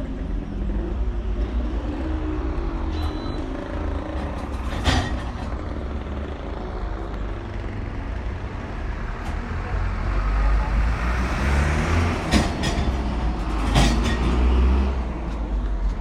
Cra., Medellín, Antioquia, Colombia - Entrada portería 2
Descripción
Sonido tónico: Gente hablando y pasando por los torniquetes
Señal sonora: Carros pasando
Micrófono dinámico (Celular)
Altura 1.70 cm
Duración 3:18
Grabado por Luis Miguel Henao y Daniel Zuluaga